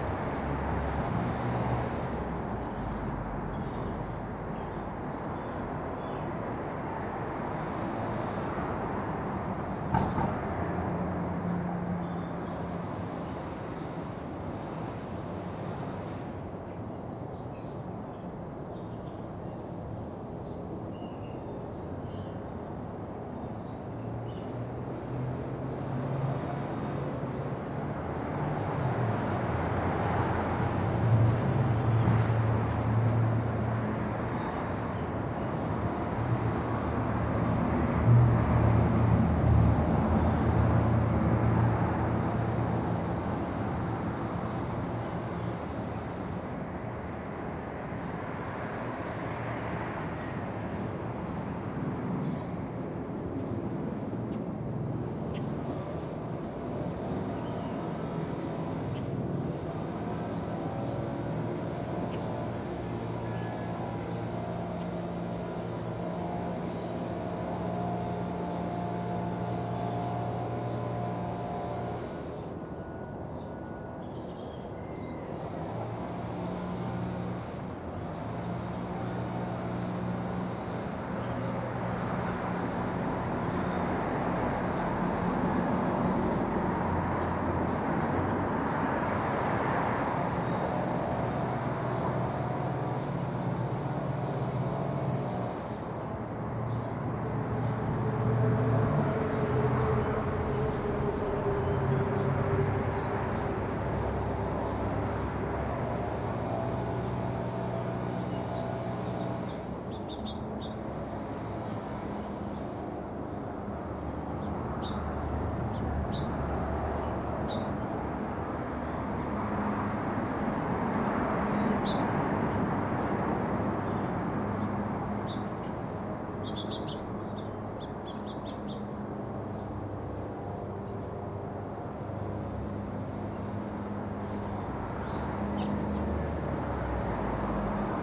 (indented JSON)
{
  "title": "San Jacinto, Albuquerque, NM, USA - Street Sound at the Public Library",
  "date": "2016-10-07 09:30:00",
  "description": "Birds, street, cars, helicopter, and 4th Street Emergency Sirens from nearby Los Griegos Public Library during Friday morning rush hour 9:30 AM. Recorded Mono by Tascam.",
  "latitude": "35.13",
  "longitude": "-106.65",
  "altitude": "1516",
  "timezone": "America/Denver"
}